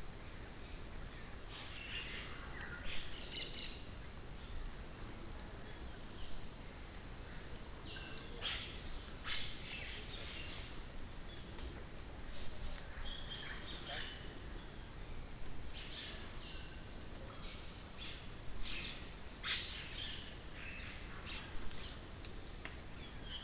Rainforest atmosphere recorded in Tambopata National Reserve, Perú.